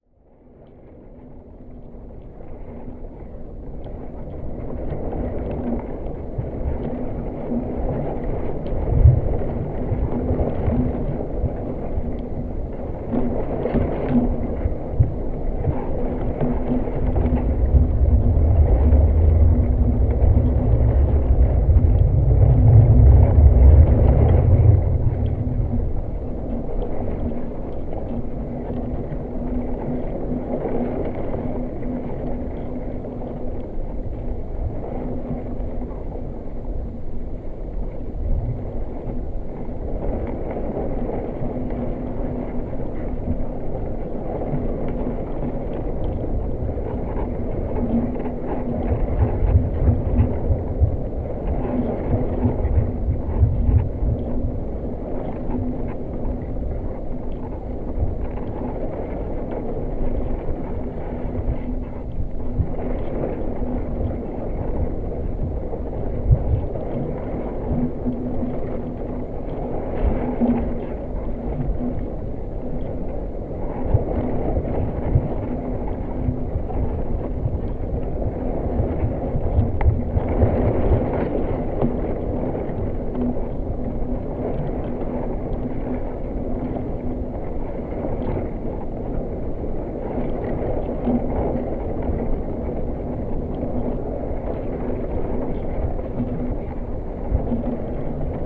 Jūrmala, Latvia, under the roots
stong wind at the sea. hydrophone is burried under the rooths of grass ans amall trees, additional geophone is sticked in sand
Vidzeme, Latvija, 21 July